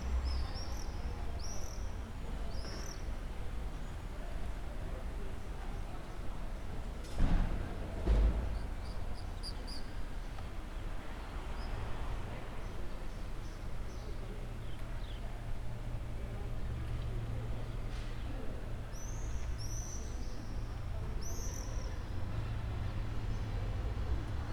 recorded on my friends balcony
18 July 2010, 12:43